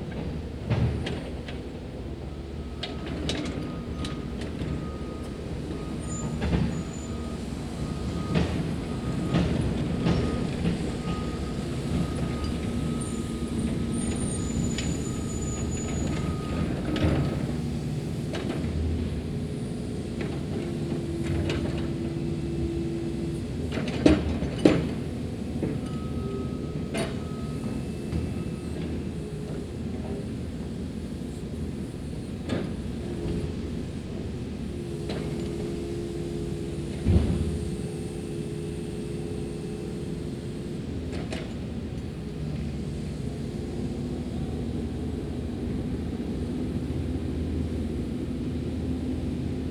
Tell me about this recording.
Road crew working to resurface a residential street. Jackhammer at 11ish minute mark. Recorded using a Tascam DR-40 Linear PCM Recorder on a tripod.